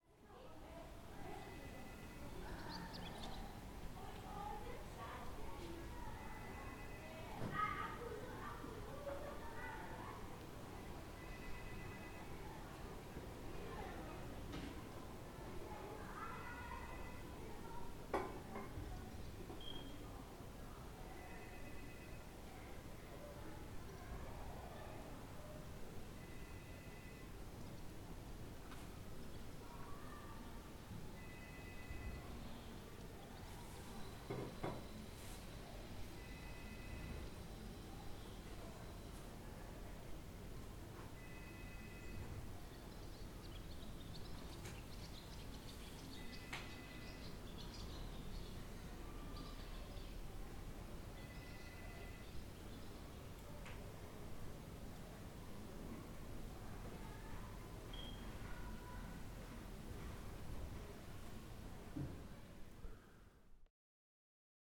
Palaiologou, Corfu, Greece - Agiou Charalabou Square - Πλατεία Αγίου Χαραλάμπου
People talking. The sound of a ringing phone in the background.
Kerkira, Greece, 16 April